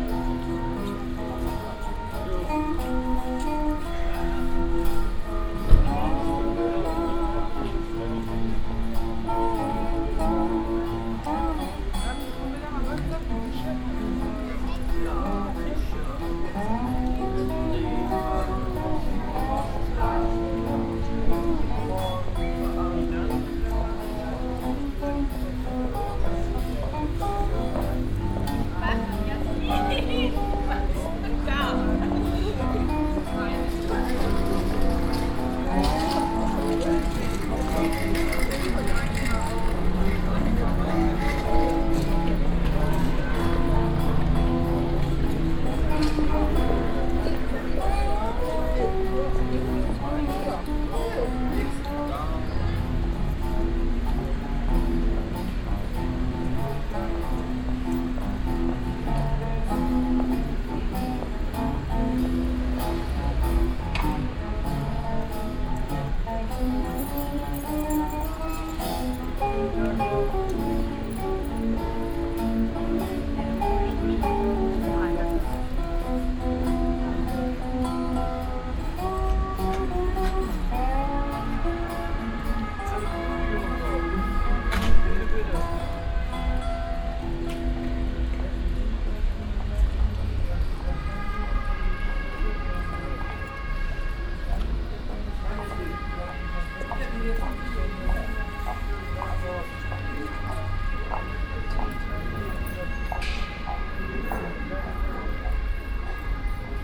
cologne, mittelstraße, street musician
In Colognes noble boutique shopping street an old street musician playing blues guitar and hi hat surrounded by the daily life city noise of passengers and diverse traffic.
soundmap nrw - social ambiences and topographic field recordings
7 November 2011, 17:42